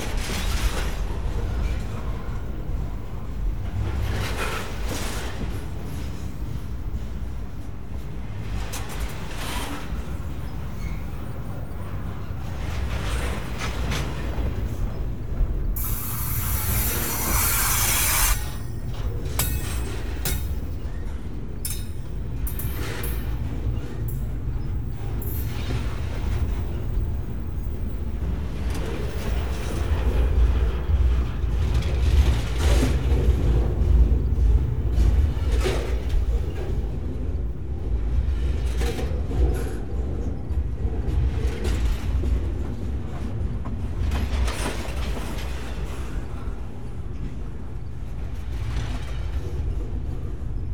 Montreal: Outremont Railroad Tracks - Outremont Railroad Tracks
equipment used: Sound Devices 722 Digital Audio Recorder & Audio-Technica AT825 Stereo Microphone
Walking along the railroad in Outremont